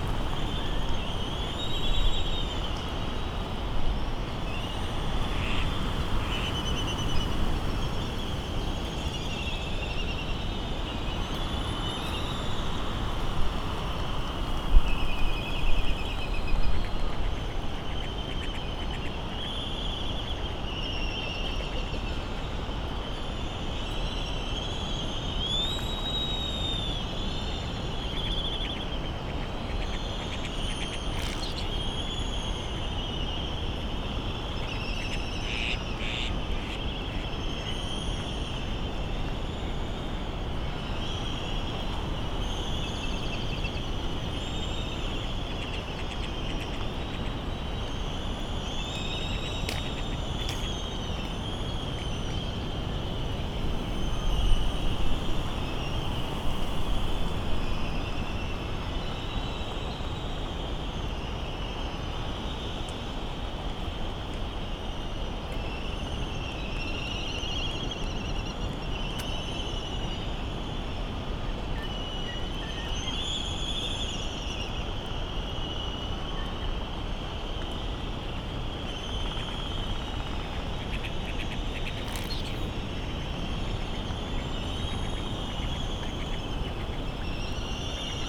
Hawaiian Islands, USA - Seep soundscape ...
Seep ... Sand Island ... Midway Atoll ... grey very windy day ... birds calling ... laysan duck ... laysan albatross calls and bill clapperings ... canaries ... red-tailed tropic bird ... open lavalier mics ...